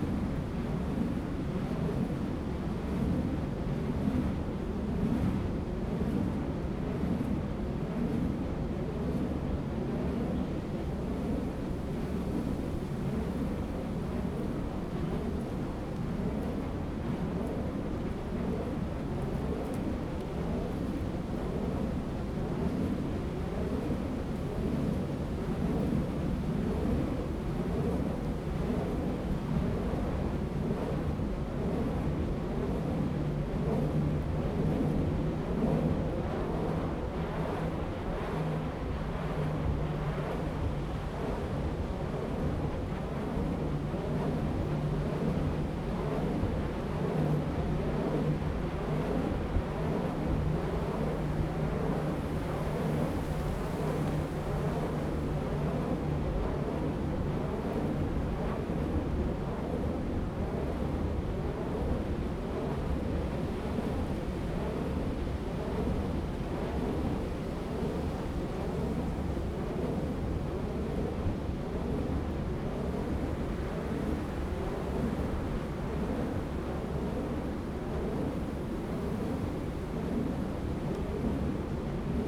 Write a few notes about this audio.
Wind, Wind Turbines, forest, Zoom H2n MS+XY